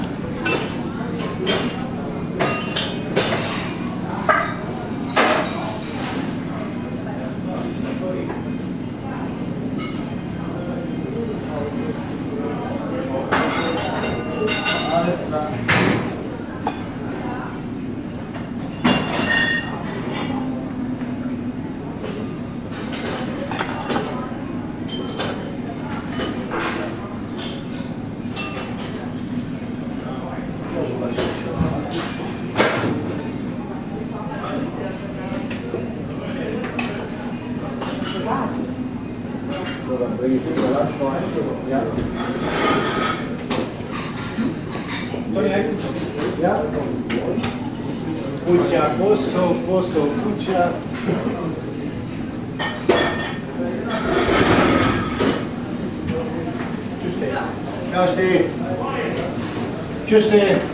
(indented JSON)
{
  "title": "Bergerhausen, Essen, Deutschland - zornige ameise",
  "date": "2010-05-17 18:45:00",
  "description": "drago restaurant in der zornigen ameise, zornige ameise 3, 45134 essen",
  "latitude": "51.43",
  "longitude": "7.06",
  "altitude": "62",
  "timezone": "Europe/Berlin"
}